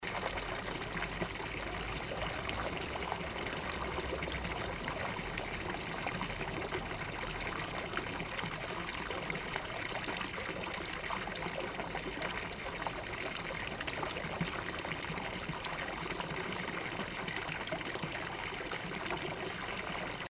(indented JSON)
{
  "title": "Mosebacke Torg, The Foutain 2m",
  "date": "2011-07-17 14:17:00",
  "description": "The Fountain 2m at Mosebacke Torg for World Listening Day 2011.",
  "latitude": "59.32",
  "longitude": "18.07",
  "timezone": "Europe/Stockholm"
}